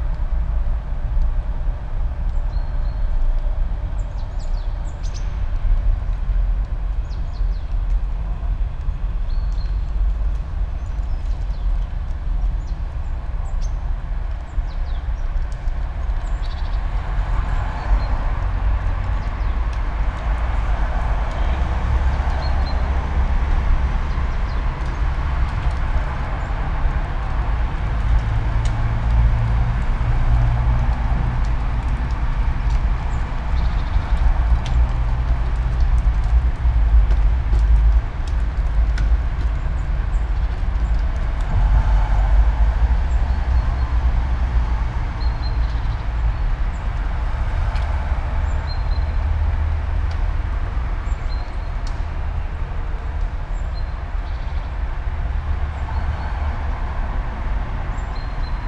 all the mornings of the ... - aug 19 2013 monday 07:47